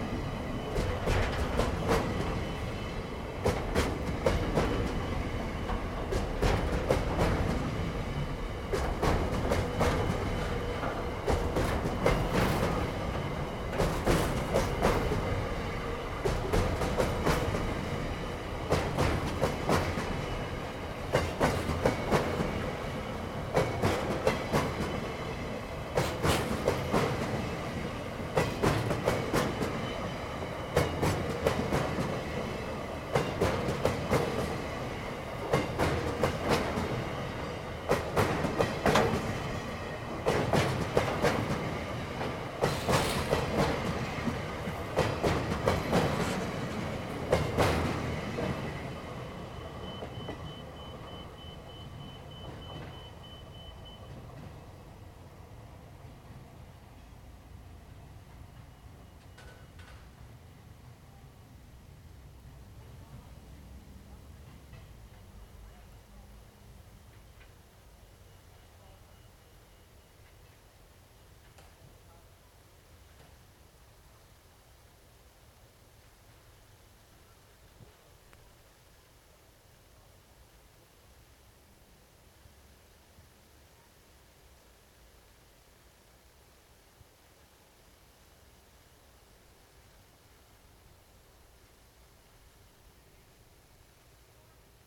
A freight train rolling through Fremantle. The track has sprinklers that spray the tracks. My best guess is this is to minimise screetching as the train goes around this bend, as the buildings opposite are residential.
Fremantle WA, Australia, 27 October 2017, 18:10